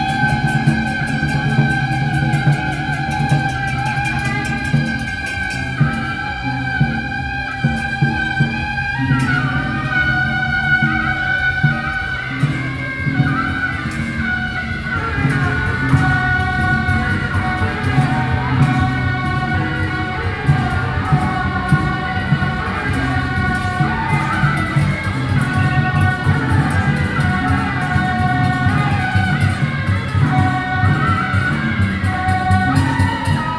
集應廟停車場, 景美, Taipei City - SoundMap20121128-1